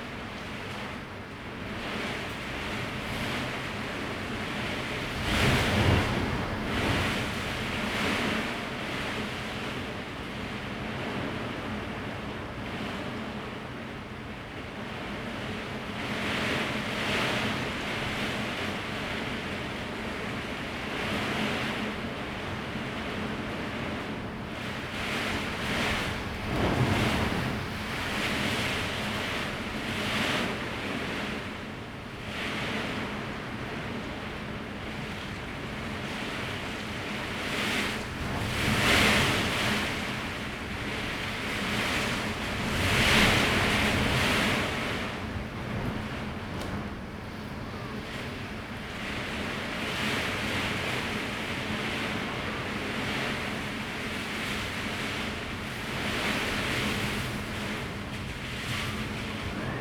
Daren St., Tamsui Dist., New Taipei City - strong wind and rain
typhoon, Gradually become strong wind and rain
Zoom H2n MS+XY